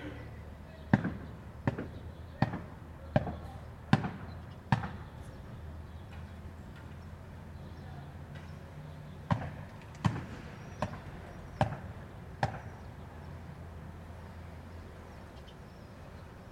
Strada Gorăslău, Sibiu, Romania - Spring cleaning
In the courtyard outside the buildings, a man is beating the dust out of a rug.